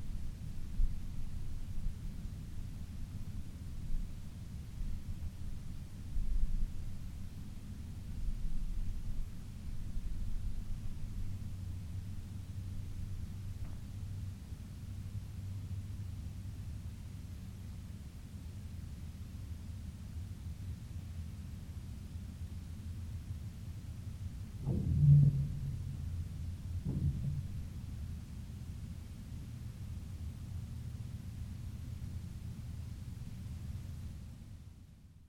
{"title": "lake ice cracks at -20C in winter", "date": "2008-06-27 02:12:00", "latitude": "58.16", "longitude": "27.19", "altitude": "38", "timezone": "Europe/Berlin"}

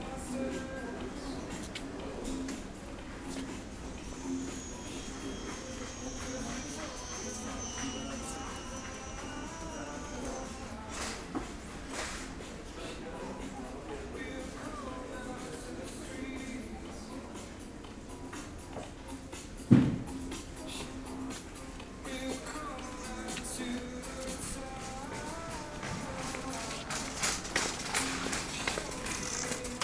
{"title": "berlin, hasenheide: baumarkt - the city, the country & me: diy store", "date": "2011-12-24 13:10:00", "description": "short soundwalk through diy store on christmas eve\nthe city, the country & me: december 24, 2011", "latitude": "52.49", "longitude": "13.42", "altitude": "43", "timezone": "Europe/Berlin"}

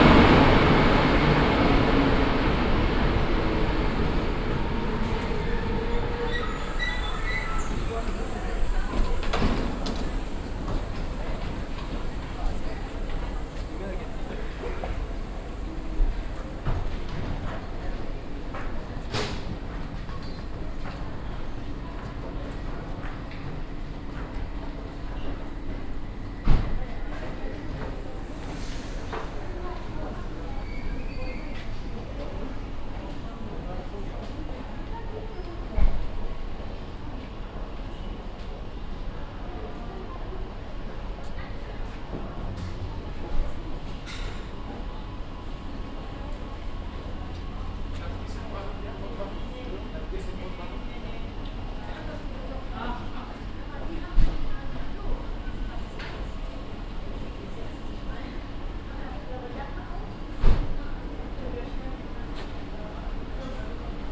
{"title": "essen, main station, track 11", "date": "2011-06-09 21:59:00", "description": "A female anouncemet, a male passenger voice and the arrival of a train at track 11 of Essen main station. Finally an alarm sound for the departure.\nProjekt - Stadtklang//: Hörorte - topographic field recordings and social ambiences", "latitude": "51.45", "longitude": "7.01", "timezone": "Europe/Berlin"}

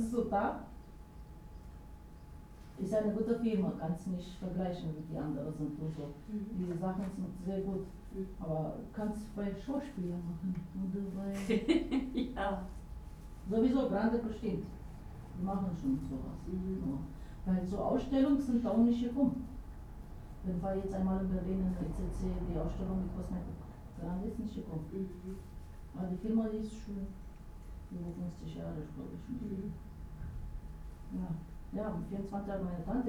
{"title": "berlin, jahnstraße: fusspflegepraxis - the city, the country & me: pedicure salon", "date": "2011-03-31 09:49:00", "description": "pedicurist talking with her client\nthe city, the country & me: march 31, 2011", "latitude": "52.49", "longitude": "13.42", "altitude": "39", "timezone": "Europe/Berlin"}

